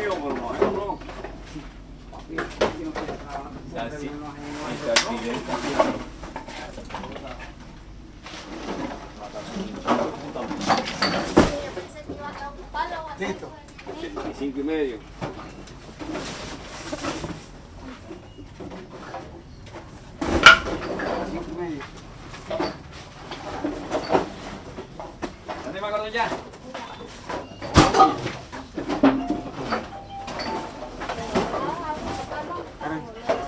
En la bodega de reciclaje de Mompox, una máquina compacta el material que traen reciclados del pueblo y de poblaciones cercanas.